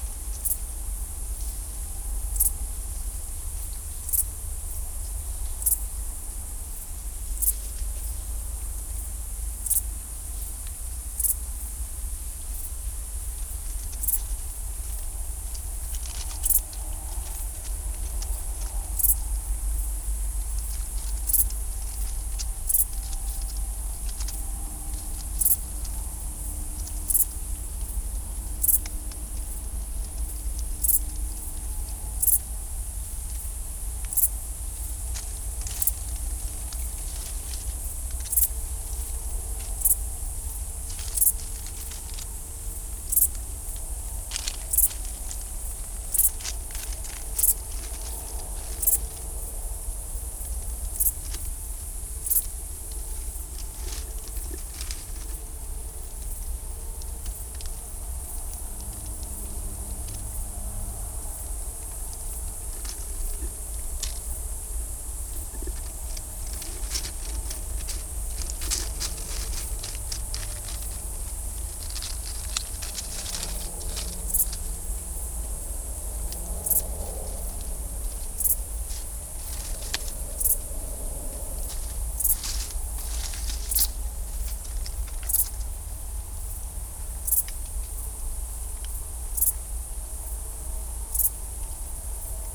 Negast forest, Waldteich, Pond, Rügen - Rodent activity at night
Someone is quite active and undisturbed around the mics, some high pitched squeaks can be heard at minute 1 - I have no ID
Mecklenburg-Vorpommern, Deutschland, 9 August 2021